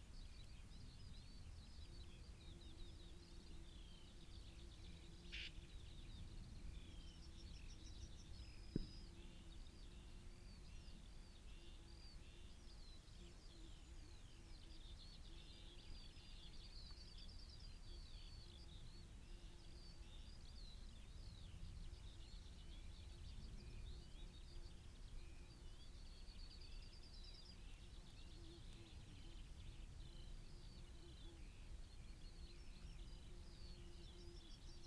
Green Ln, Malton, UK - grubbed out bees nest ...
grubbed out bees nest ... buff tipped bees nest ..? dug up by badger ..? dpa 4060s in parabolic to MixPre3 ... parabolic resting on nest lip ... return visit ... bird calls ... song ... blackbird ... yellowhammer ... skylark ... corn bunting ... blue tit ... chaffinch ... some spaces between the sounds ...
England, United Kingdom, 24 June